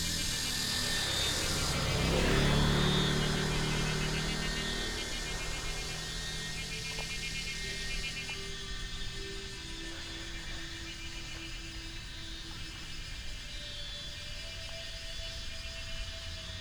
Paper Dome, 桃米里 Nantou County - Walk along the path
Walk along the path, Brook, Cicada sounds